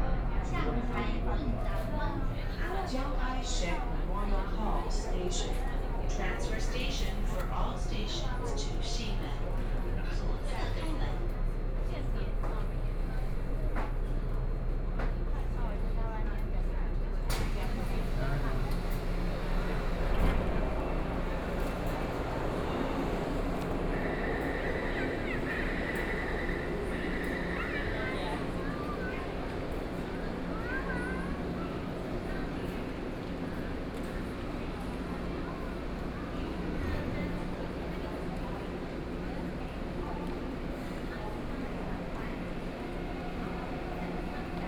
{"title": "Roosevelt Rd., Taipei City - Tamsui Line (Taipei Metro)", "date": "2013-10-19 16:30:00", "description": "from Taipower Building Station to Chiang Kai-Shek Memorial Hall Station, Binaural recordings, Sony PCM D50 + Soundman OKM II", "latitude": "25.02", "longitude": "121.52", "altitude": "26", "timezone": "Asia/Taipei"}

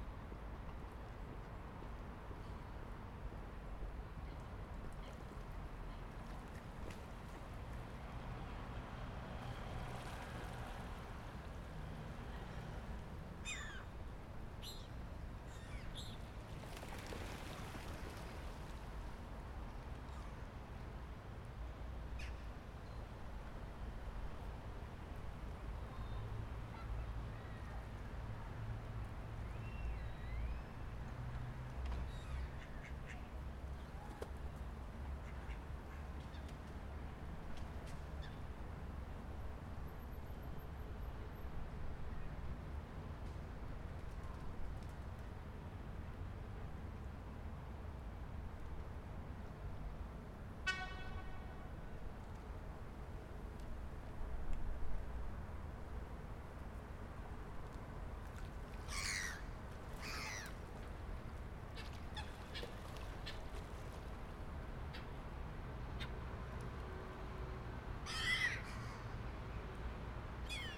{"title": "Jozef Israëlskade, Amsterdam, Nederland - Meeuwen / Guls", "date": "2013-10-18 14:00:00", "description": "(description in English below)\nMeeuwen en andere vogels komen graag van buiten de stad naar de Jozef Israelskade, om hier gevoerd te worden door de mensen uit de stad. Het geluid van de vogels trekt mensen aan en geeft ze het gevoel toch een beetje natuur in de stad te hebben.\nGulls and other birds like to come from out of town to the Joseph Israelskade, to be fed by the people of the city. The sound of birds can make you feel like there's a bit of nature in the city. The sound attracts people.", "latitude": "52.35", "longitude": "4.91", "altitude": "5", "timezone": "Europe/Amsterdam"}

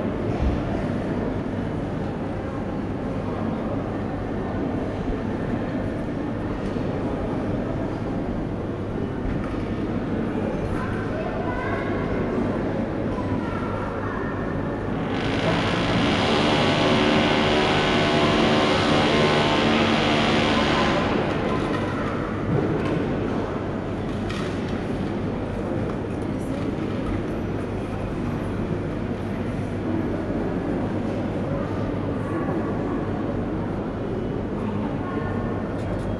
{"title": "Kiewski Voksal, Moskau, Russland - Kievski Voksal", "date": "2014-06-09 10:33:00", "description": "Big hall of railwaystation", "latitude": "55.74", "longitude": "37.57", "altitude": "131", "timezone": "Europe/Moscow"}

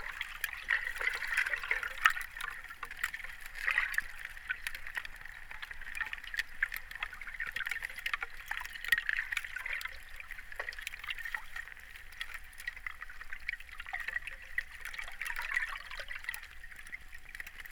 {"title": "Underwater sounds of Vltava - freezy early evening", "date": "2009-01-14 19:03:00", "description": "Sounds of Smichovs river bank in the very cold early evening. Recorded with two underwater microphones.", "latitude": "50.07", "longitude": "14.41", "timezone": "Europe/Prague"}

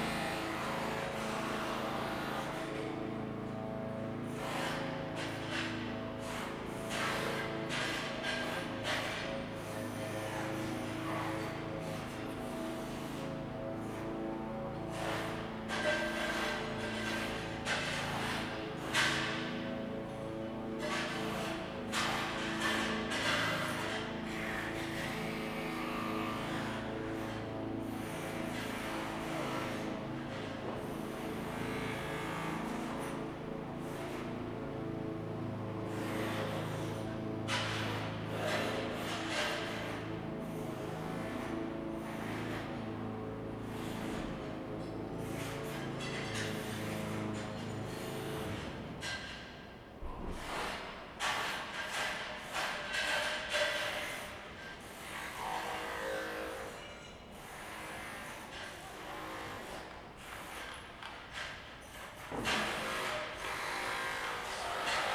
{"title": "Ascolto il tuo cuore, città. I listen to your heart, city. Several chapters **SCROLL DOWN FOR ALL RECORDINGS** - Tuesday noisy Tuesday in the time of COVID19 Soundscape", "date": "2020-06-23 10:18:00", "description": "\"Tuesday noisy Tuesday in the time of COVID19\" Soundscape\nChapter CXI of Ascolto il tuo cuore, città, I listen to your heart, city.\nTuesday, June 23th 2020. Fixed position on an internal terrace at San Salvario district Turin, one hundred-five days after (but day fifty-one of Phase II and day thirty-eight of Phase IIB and day thirty-two of Phase IIC and day 9th of Phase III) of emergency disposition due to the epidemic of COVID19.\nStart at 10:18 a.m. end at 11:02 p.m. duration of recording 44’:14”", "latitude": "45.06", "longitude": "7.69", "altitude": "245", "timezone": "Europe/Rome"}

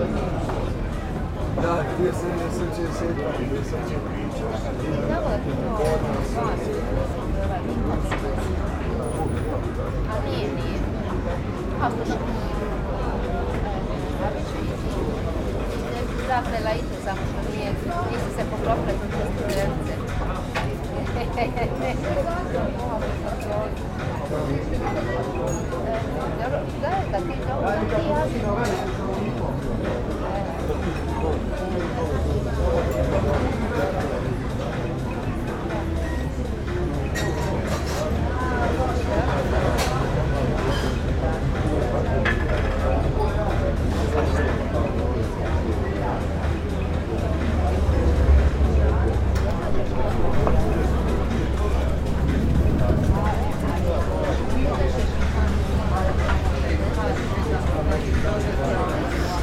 {"title": "Obala kralja Tomislava, Makarska, Croatie - Outside in Makarska", "date": "2019-07-23 10:36:00", "description": "Outside a abr in Makarska, Croatia, Zoom H6", "latitude": "43.29", "longitude": "17.02", "altitude": "3", "timezone": "Europe/Zagreb"}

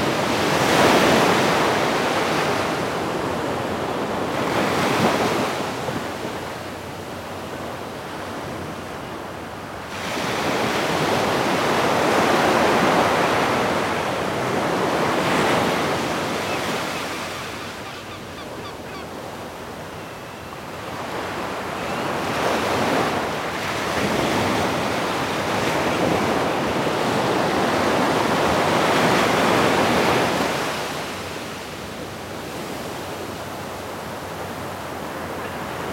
{"title": "Caleta Portales - Sea waves", "date": "2017-08-15 12:30:00", "description": "Sea Waves recording from the beach close to Caleta Portales.\nRecording during the workshop \"A Media Voz\" by Andres Barrera.\nMS Setup Schoeps CCM41+CCM8 in a Zephyx Cinela Windscreen", "latitude": "-33.03", "longitude": "-71.59", "altitude": "12", "timezone": "America/Santiago"}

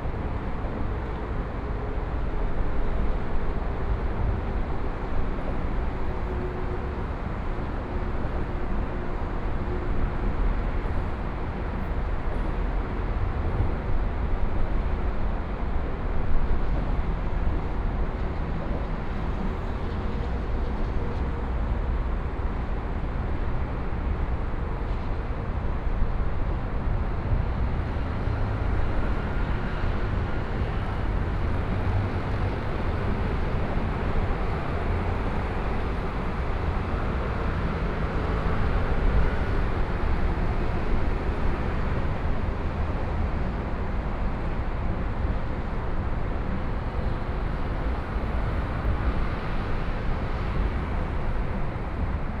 {"title": "中山區劍潭里, Taipei City - Traffic Sound", "date": "2014-03-15 19:26:00", "description": "Traffic Sound, Environmental Noise\nBinaural recordings", "latitude": "25.07", "longitude": "121.53", "timezone": "Asia/Taipei"}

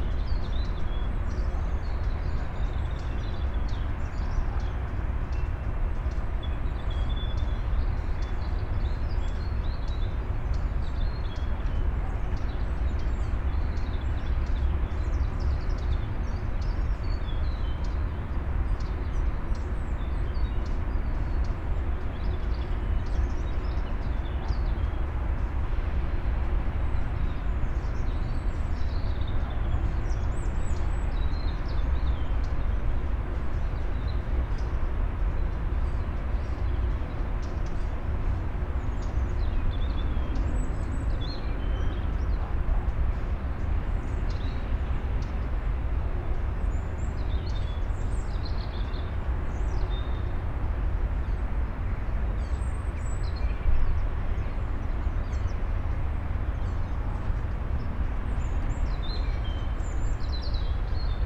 {"title": "Rue Vincent Auriol, Aix-en-Provence, Fr. - city hum from above", "date": "2014-01-08 17:25:00", "description": "city of Aix heard from above, mainly the hum and drone of cars and other vehicles. from afar it sometimes sounds good.\n(PCM D50, EM172)", "latitude": "43.54", "longitude": "5.45", "altitude": "259", "timezone": "Europe/Paris"}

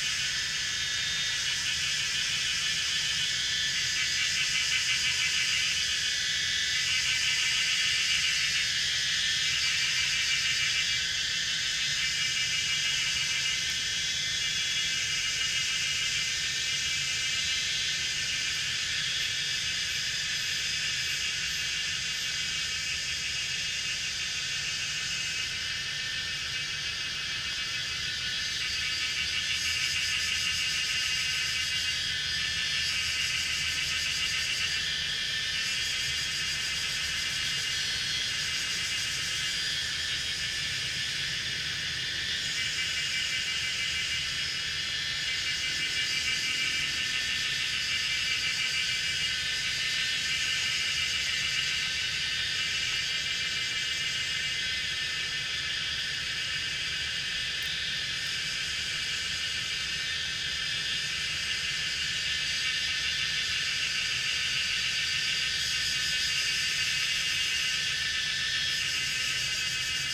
{"title": "水上, 桃米里, Puli Township - Cicadas sound", "date": "2016-06-07 18:19:00", "description": "In the woods, Cicadas sound\nZoom H2n MS+XY", "latitude": "23.94", "longitude": "120.91", "altitude": "525", "timezone": "Asia/Taipei"}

